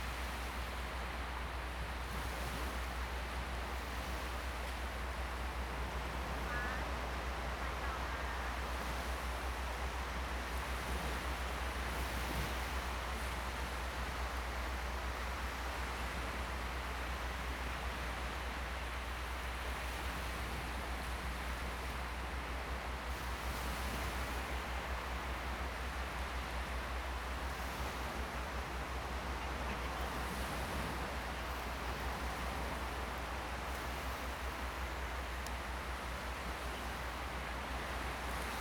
蛤板灣, Hsiao Liouciou Island - At the beach
Tourists, Sound of the waves, At the beach
Zoom H2n MS +XY